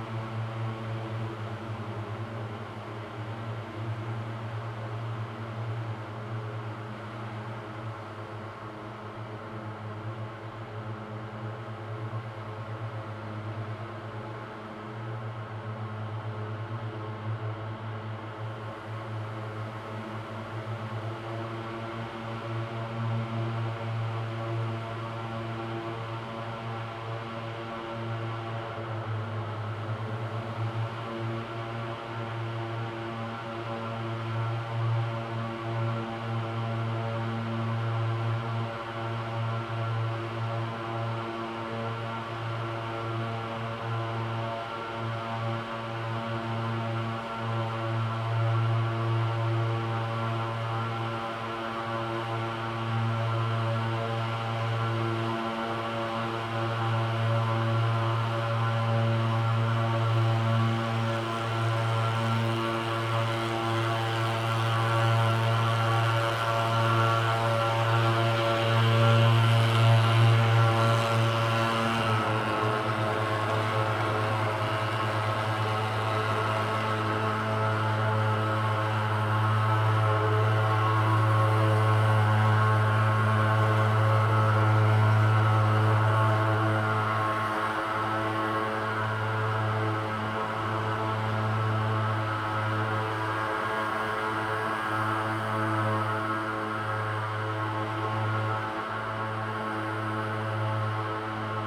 Taipei Railway Workshop, Taiwan - Sprayed with disinfectant
Sprayed with disinfectant, Disused railway factory
Zoom H2n MS + XY